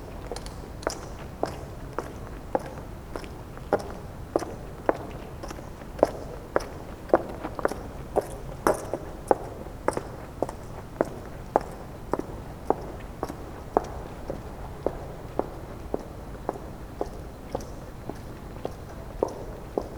Berlin: Vermessungspunkt Friedel- / Pflügerstraße - Klangvermessung Kreuzkölln ::: 28.02.2012 ::: 02:18
Berlin, Germany